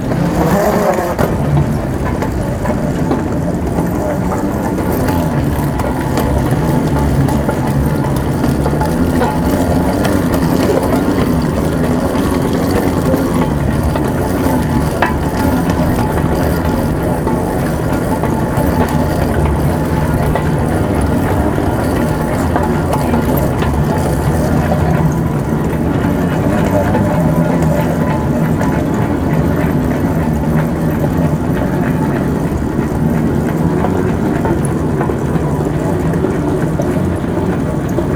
Bruxelles Airport (BRU), Belgium - on the carpets again
next day, coming back home, again with the trolley on the sliding carpets, same place, opposite direction (this time with the mic closer to the wheels)